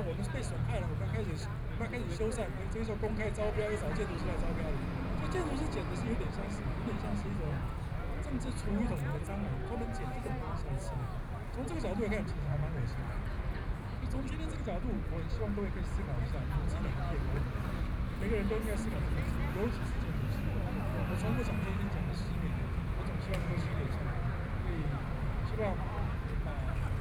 中正區幸福里, Taipei City - Street Forum
Walking through the site in protest, Traffic Sound, People and students occupied the Legislature, A group of students and university professors sitting in the park solidarity with the student protest movement
Binaural recordings
Taipei City, Taiwan, 21 March 2014, 21:47